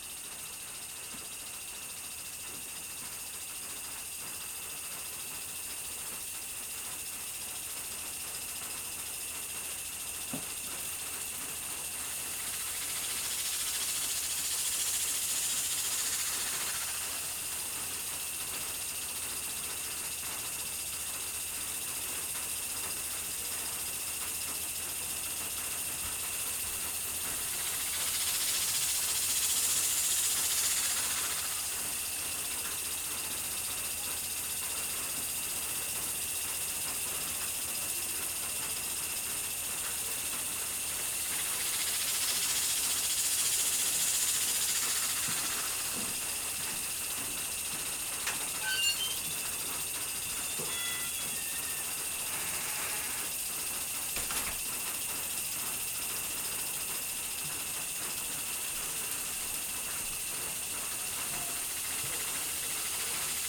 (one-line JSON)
{"title": "Fayette County, TX, USA - Sprinklers & Insects", "date": "2015-06-22 09:00:00", "description": "Recorded during early summer on a humid night in Ledbetter, TX. While I was recording the remnants of rain and the growing chorus of insects, a sprinkler system turned on. Recorded with a Marantz PMD 661 and a stereo pair of DPA 4060's.", "latitude": "30.13", "longitude": "-96.82", "altitude": "136", "timezone": "America/Chicago"}